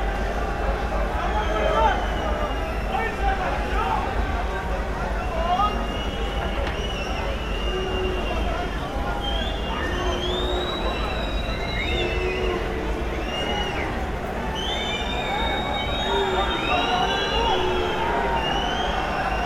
Centrum Kreuzberg, Berlin, Deutschland - May 1st night ambience, party people and police
party people and police playing cat & mouse at Zentrum Kreuzberg
the usual small riots at this day. Nothing much happens.
(Tascam IXJ2, Primo EM172)
Berlin, Germany, 1 May 2014, 23:30